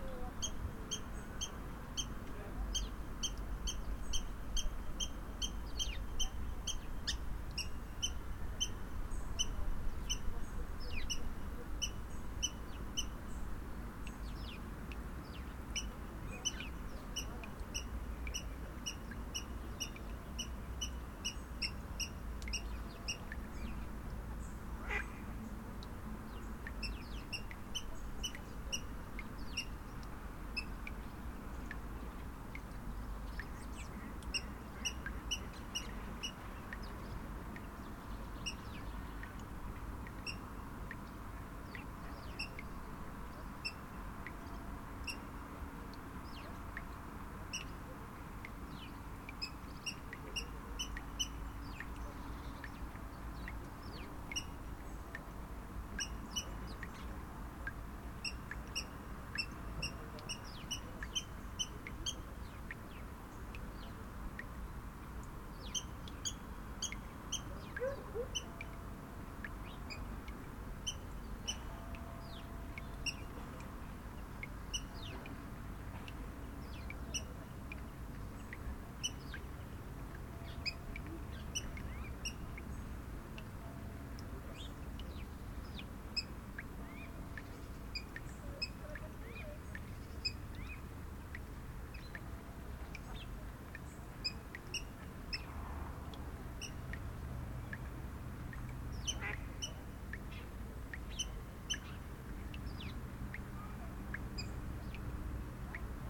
Baie de mémard, Aix-les-Bains, France - Poule d'eau
Sur un ponton flottant baies de Mémard au bord de l'eau près d'une roselière, faible activité des oiseaux en cette saison, les cris répétitifs d'une poule d'eau, quelques moineaux, des canards colvert, goelands au loin.....
France métropolitaine, France, 2 October 2022